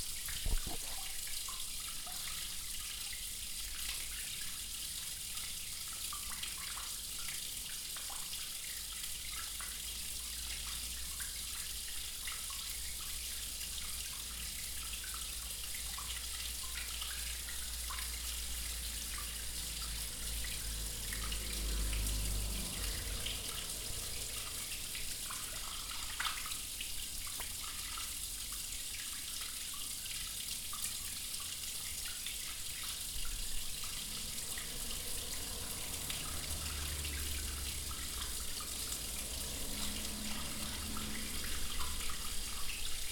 I was fascinated with the sound of this water drain ever since I was a child. remember stopping there while being on a walk with my dad and listening. one of my favorite sounds.
16 October 2010, ~21:00